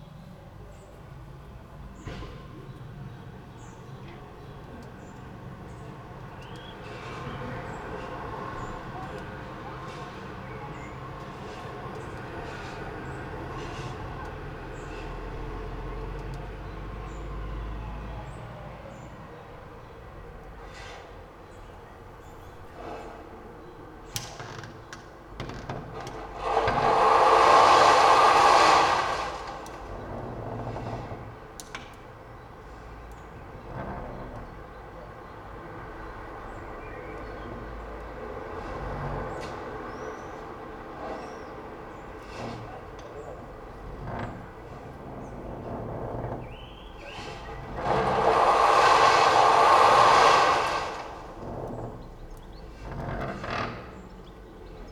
park window - inner window creaks, sand
Maribor, Slovenia, 1 June 2014